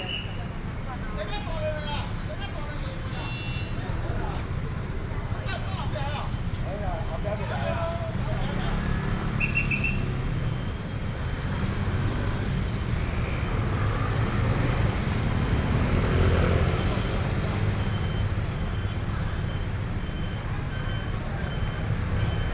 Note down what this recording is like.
Traditional temple Festival, Traffic Noise, Binaural recordings, Sony PCM D50 + Soundman OKM II